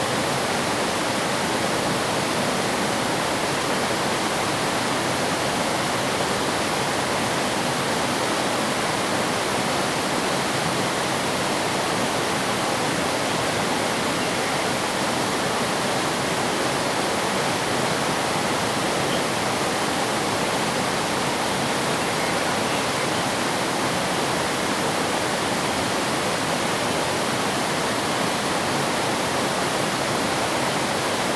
灰瑤子溪, Tamsui Dist., New Taipei City - Stream
Stream, Bird sounds
Zoom H2n MS+XY